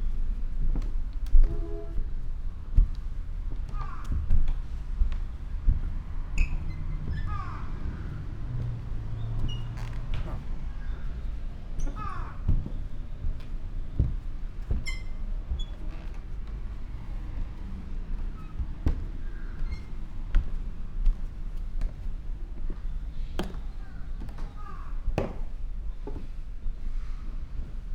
garden, Chishakuin temple, Kyoto - walking the wooden floor
gardens sonority
veranda, steps, drops
Kyoto Prefecture, Japan